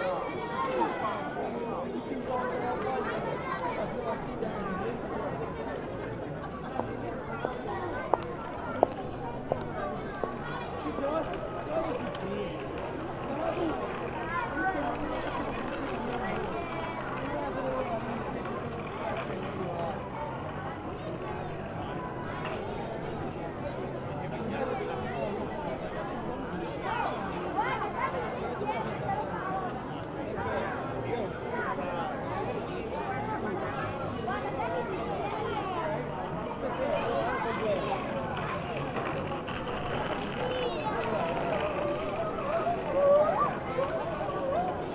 taormina, piazza IX.aprile - piazza IX.aprile, evening
taormina, piazza IX.aprile. busy place, even at night and outside the tourist season. great sights from here, 200m above sea level. sound of plastic toys running around, bar music, children playing.